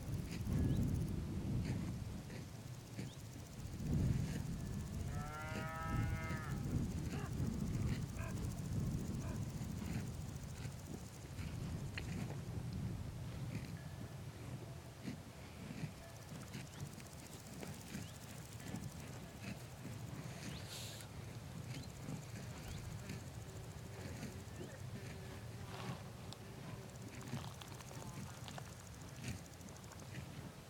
Corte, France - Lavu di Ninu
Sounds of a cow grazing near the Lake Nino, one of Corsica's most stunning mountain lake, accompanied by the bells of a herd of goats, cawing crows and flying-by insects.
Recorded on a Sound Devices MixPre-6 with a pair of Uši Pro / AB stereo setup.